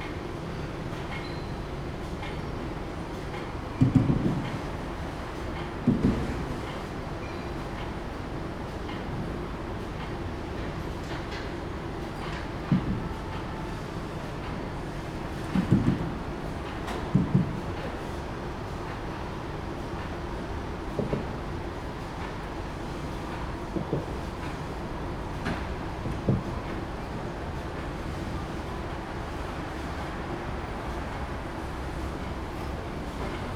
建國陸橋, Changhua City - Under the bridge
Under the bridge, The train runs through, Traffic sound, Factory machinery operation sound
Zoom H2n MS+XY
Changhua City, Changhua County, Taiwan, 15 February 2017